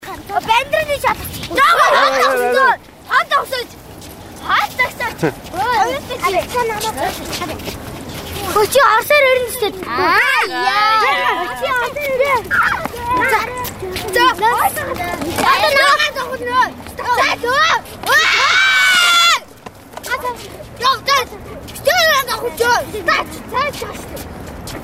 Ulan Bator, children in front of Genghis Khan, recorded by VJ Rhaps